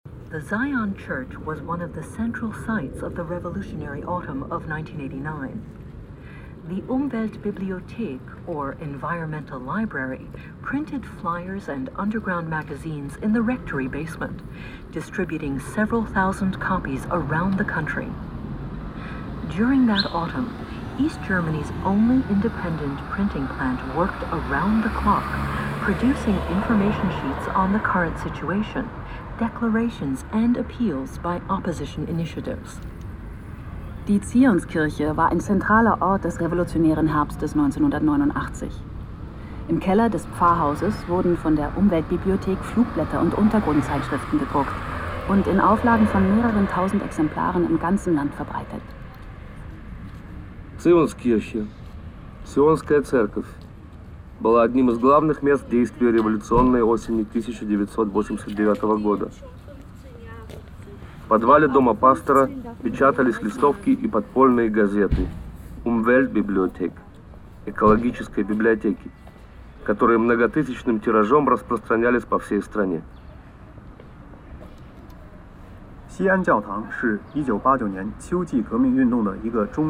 Berlin, Zions church, info terminal - berlin, zions church, info terminal
at the walkway to the church.the sound of an info terminal with several international languages describing the political history of the church.
At the end overwhelmed by the sound of a passing by tram.
soundmap d - social ambiences and topographic field recordings
6 February, ~16:00